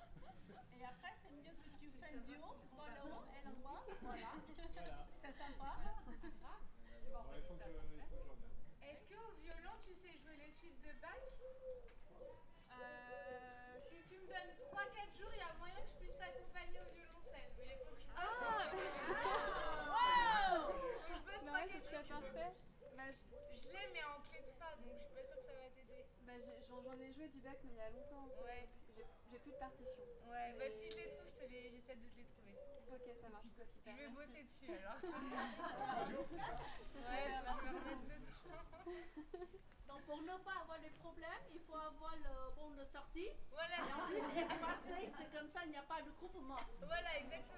Rue Edmond Nocard, Maisons-Alfort, France - Coronavirus Covid 19 Street Concert Trumpet Violin
Street Concert for our careers during Covid 19 Containement with Voices, Pan, Trumpet and Violin, Song "Olé", "La Marseillaise" and Tribute to singer Christophe died the day before.
Concert improvisé tous les soirs à 20h dans une rue pavillonnaire pour soutenir les aides soignants pendant le confinement. Applaudissements, concert de casserole, trompette au balcon, violon, voix voisinage....
Enregistrement: Colin Prum
France métropolitaine, France, April 2020